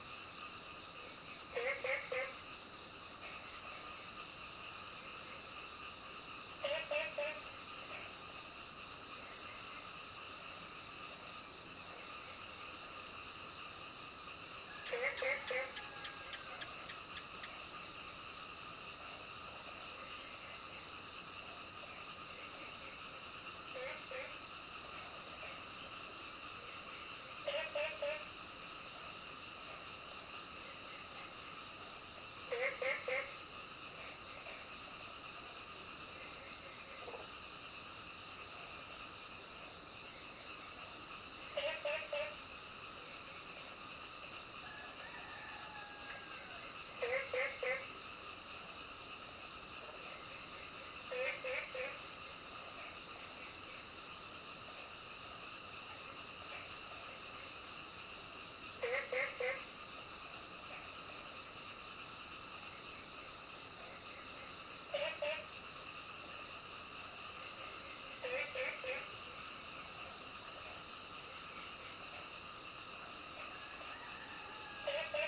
綠屋民宿, 桃米里 Nantou County - Early morning
Crowing sounds, Bird calls, Frogs chirping, Early morning
Zoom H2n MS+XY
Puli Township, Nantou County, Taiwan